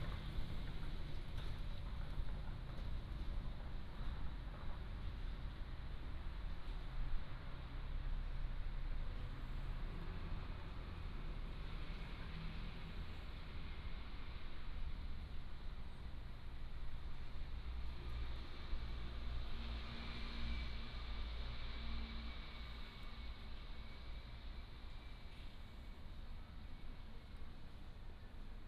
三義車站, Sanyi Township - Small square
Small square outside the station, Traffic sound
Miaoli County, Sanyi Township, 16 February 2017